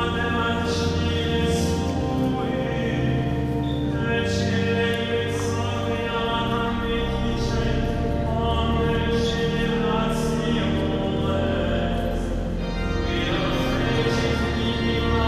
Fragment of a mass in de Cathédrale de Notre Dame (2). Binaural recording.